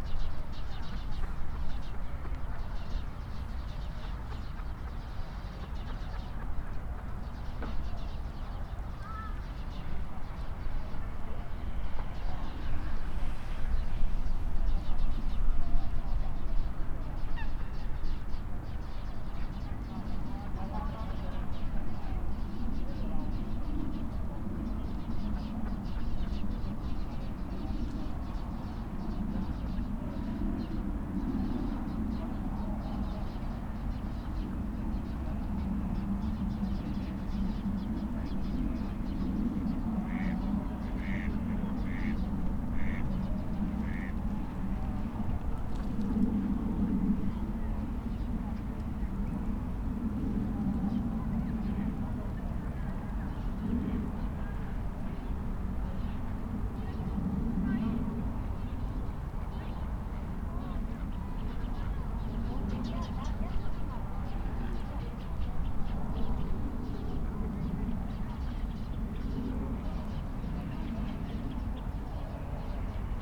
Tineretului Park, București, Romania - Runners and Birds in Tineretului Park
A stroll through Tineretului Park in the early evening of January 21st, 2019: nature sounds combined with traffic hum in the background, police & ambulance sirens, close footsteps and voices of passerby. Using a SuperLux S502 ORTF Stereo Mic plugged into Zoom F8.